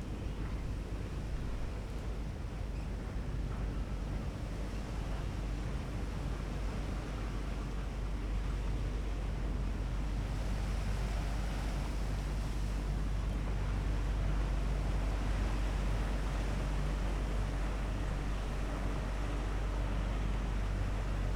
woudsend: midstrjitte - the city, the country & me: wooded area at the ship canal
stormy day (force 7-8), trees swaying in the wind, water laps against the bank
city, the country & me: june 13, 2013